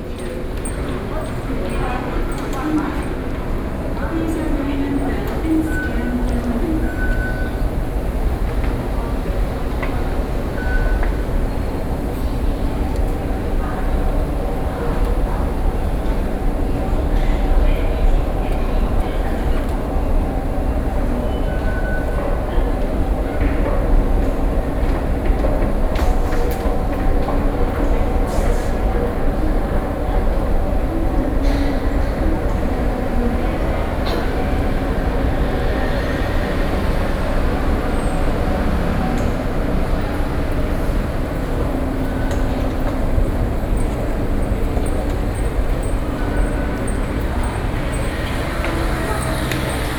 Jingmei Station, Wenshan District - At MRT stations